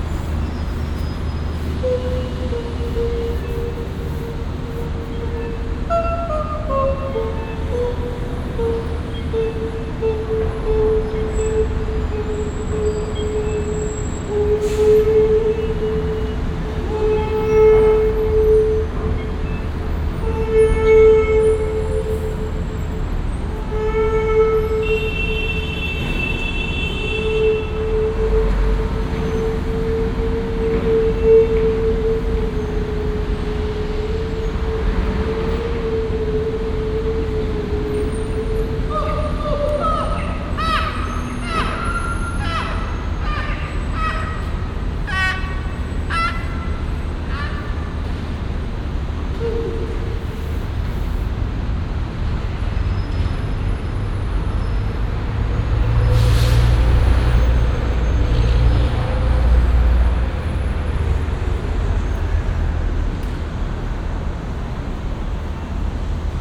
vancouver, west hastings, seymour round architecture - vancouver, west hastings, seymour - round architecture
voices and street sound in a refelective round architecture roof construction - made of glass and metall
soundmap international
social ambiences/ listen to the people - in & outdoor nearfield recordings